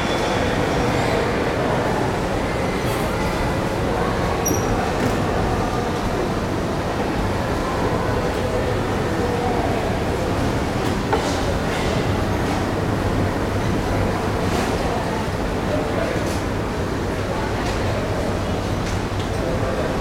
Mall, الدوحة، Qatar - 01 Mall, Qatar
One of a series of sound walks through Qatar's ubiquitous shopping malls